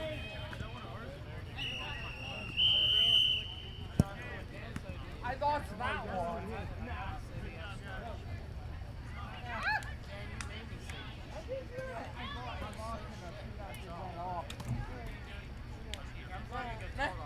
The College of New Jersey, Pennington Road, Ewing Township, NJ, USA - Flag Football
Monday Flag Football Game